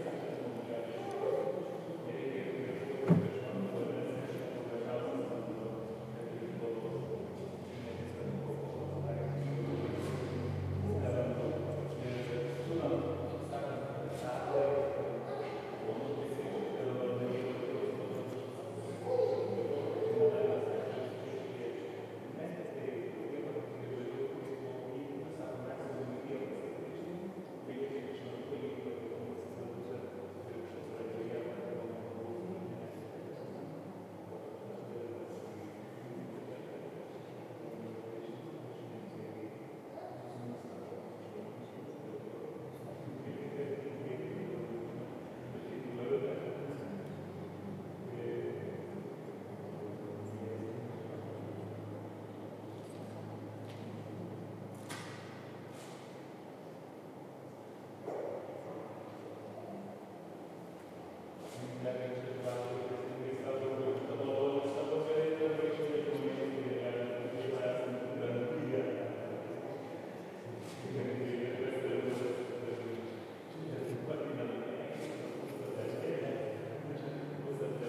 Nida, Lithuania - Marijos Church Interior
Recordist: Tamar Elene Tsertsvadze
Description: On a sunny day inside the church. Ceremony of Christening. Recorded with ZOOM H2N Handy Recorder.
27 July 2016, 17:25